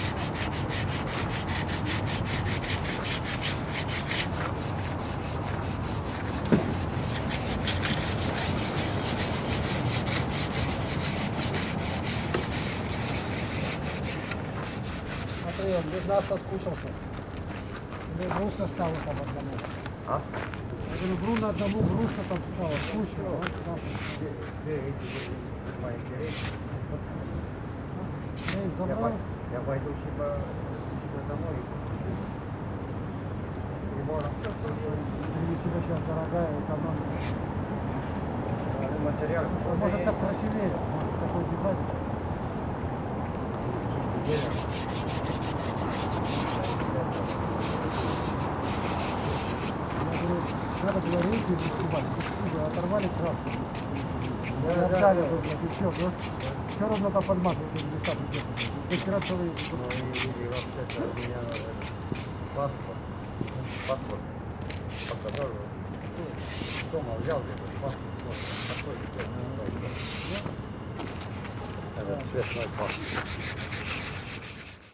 Tallinn, Harju
workers cleaning the wooden park benches with sandpaper